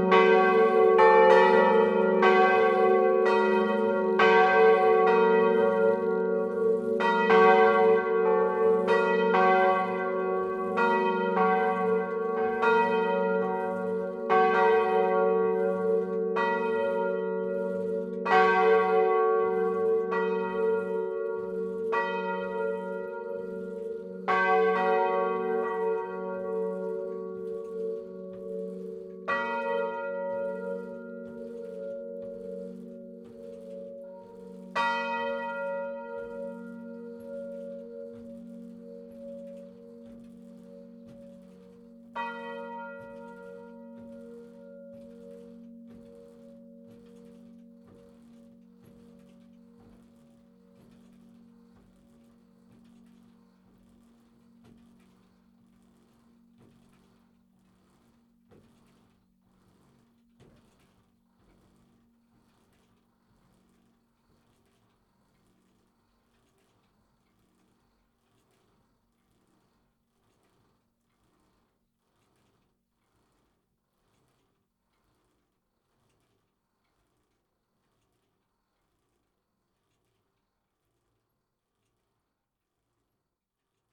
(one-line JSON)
{"title": "Rue du Temple, La Ferté-Vidame, France - La Ferté Vidam - Église St-Nicolas", "date": "2019-11-15 11:00:00", "description": "La Ferté Vidam (Eure-et-Loir)\nÉglise St-Nicolas\nLa volée Tutti", "latitude": "48.61", "longitude": "0.90", "altitude": "250", "timezone": "Europe/Paris"}